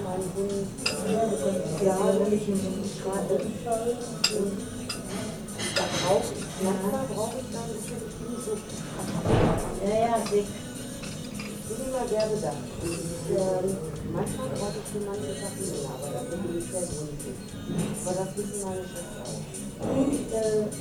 isenbeck-deele - internationales restaurant isenbeck-deele, hamm
internationales restaurant isenbeck-deele